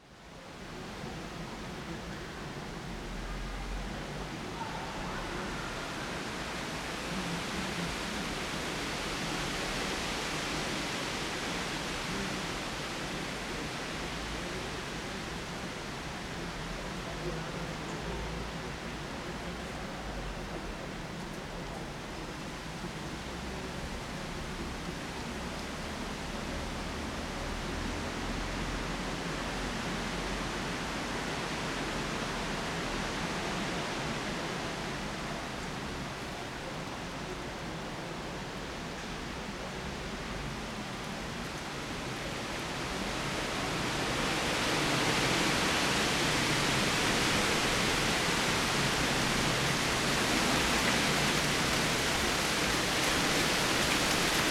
cologne, bruesseler platz, in front of church - wind in trees, night
autumn night, wind in the trees at brüsseler platz, köln
4 November 2010, 23:40